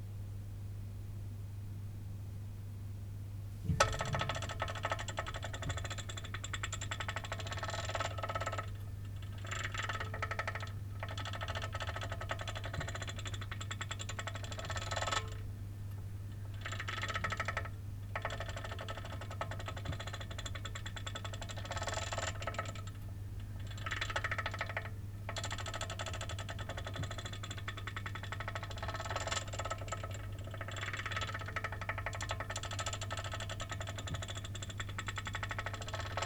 berlin, friedelstraße: gaszähler - the city, the country & me: gas meter
the city, the country & me: january 8, 2011